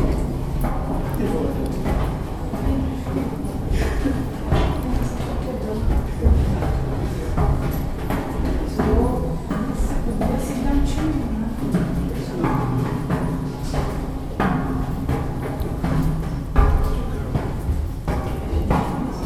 Zlatý kůň, Koněprusy, Česká republika - inside the caves of Koněprusy
sounddocumentary from the tour inside the Caves
Střední Čechy, Česko, European Union, 19 June 2013